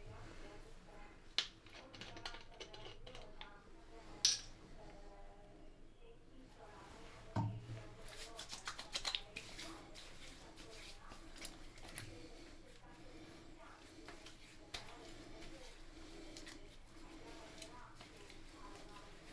Ascolto il tuo cuore, città. I listen to your heart, city. Several chapters **SCROLL DOWN FOR ALL RECORDINGS** - Passeggiata ai tempi del COVID19
Wednesday March 11 2020. Walking in San Salvario district to Porta Nuova railway station and back;, Turin the afternoon after emergency disposition due to the epidemic of COVID19.
Start at 4:25 p.m. end at 5:01 p.m. duration of recording 36'12''
The entire path is associated with a synchronized GPS track recorded in the (kml, gpx, kmz) files downloadable here:
Piemonte, Italia, 11 March 2020